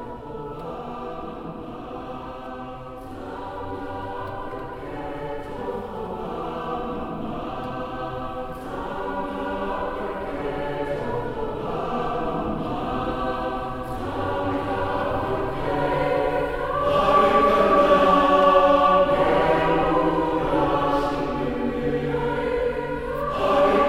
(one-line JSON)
{"title": "Paulus Kirche, Hamm, Germany - Mama, please, let me love him...", "date": "2016-12-12 20:25:00", "description": "an excerpt of a love song “Toxoba Mama” performed by Cota Youth Choir from Windhoek Namibia. the song tells the story of a girl begging her mother, “...please Mama, I love him so much; please Mama let me love him...”\nall tracks archived at", "latitude": "51.68", "longitude": "7.82", "altitude": "67", "timezone": "GMT+1"}